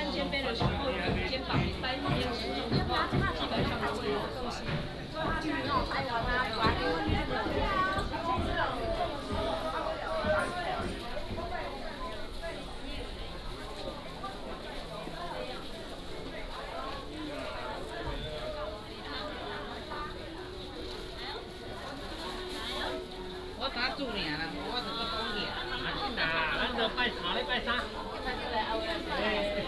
Ln., Sec., Hai’an Rd., Tainan City - Market Shop Street
Walking in the market, Market Shop Street
West Central District, Tainan City, Taiwan, 18 February 2017, ~10:00